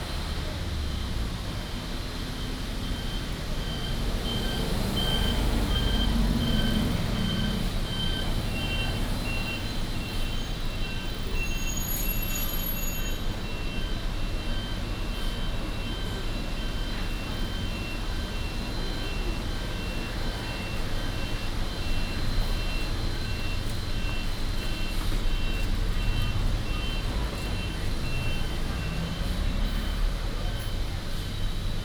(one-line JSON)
{"title": "Xianfu Rd., Taoyuan Dist., Taoyuan City - In front of the convenience store", "date": "2016-10-12 13:02:00", "description": "In front of the convenience store, Pedestrian footsteps, Woodworking construction sound, Traffic sound", "latitude": "24.99", "longitude": "121.30", "altitude": "111", "timezone": "Asia/Taipei"}